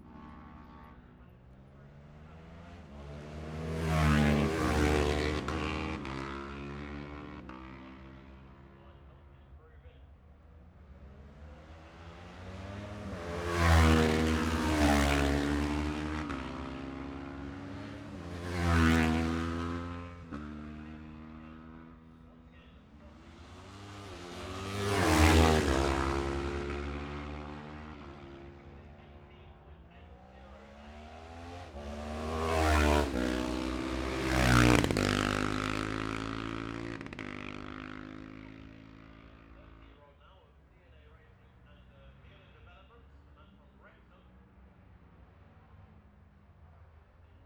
the steve henshaw gold cup 2022 ... lightweight and 650 twins qualifying ... dpa 4060s on t-bar on tripod to zoom h5 ...